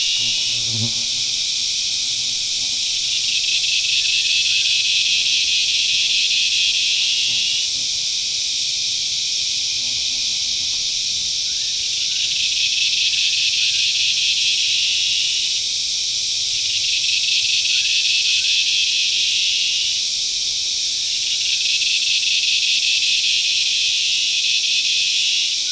Minyon Falls, Australia: Cicadas in Summer
The sound of cicadas in Australian rainforests during summer can be intoxicatingly loud. Their slow communal pulse is quite hypnotic. On the day of this recording their call lasted for hours.